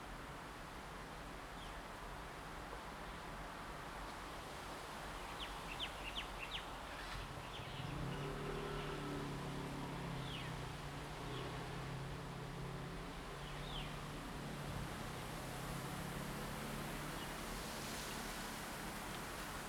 Birds singing, Wind, In the woods
Zoom H2n MS+XY
福建省, Mainland - Taiwan Border, 3 November 2014, 08:15